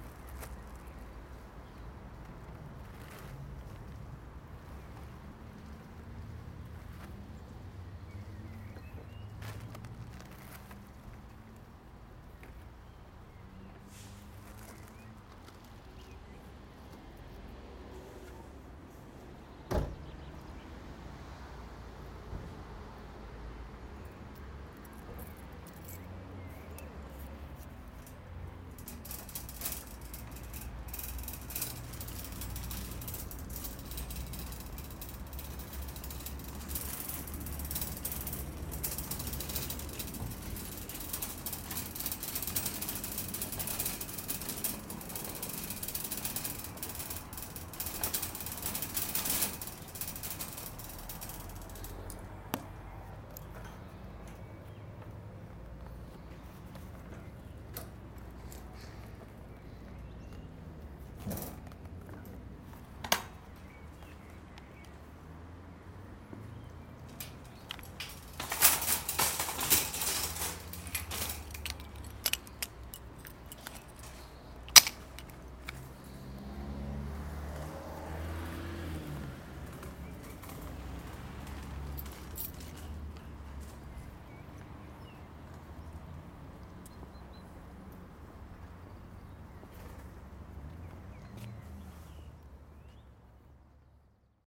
Ruppichteroth, parking site, super market
recorded june 25th, 2008, around 10 p. m.
project: "hasenbrot - a private sound diary"